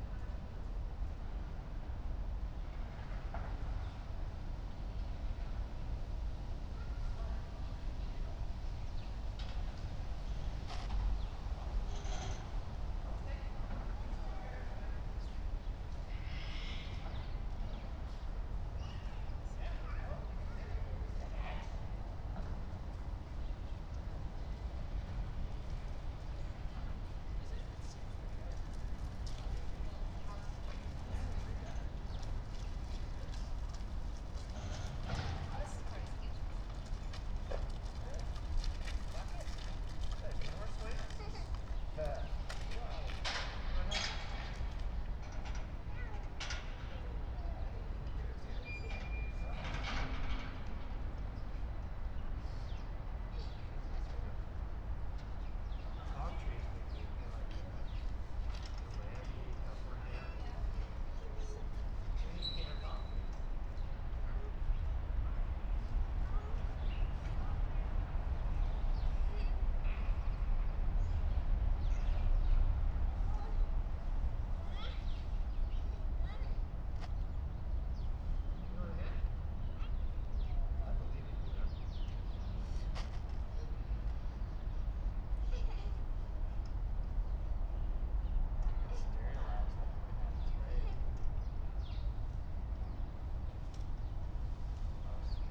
Schinkestraße, Berlin - playground ambience
playground Schinkestr. ambience in pandemic lockdown
(Sony PCM D50, AOM5024)